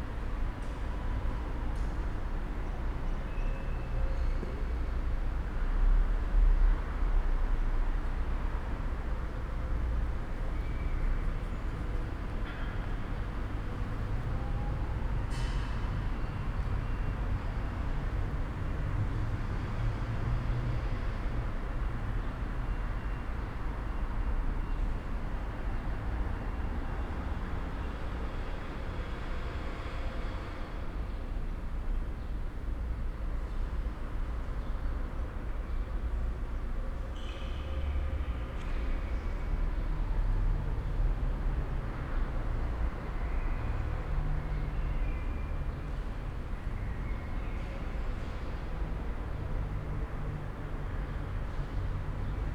Sonnenallee, Neukölln, Berlin - abandoned factory
walk and ambience in an abandoned factory. this building is relatively new, according to a worker i've spoken too, but is in the process of beeing dismantled for the A100 motorway.
(Sony PCM D50, DPA4060)
Berlin, Deutschland, European Union, May 2013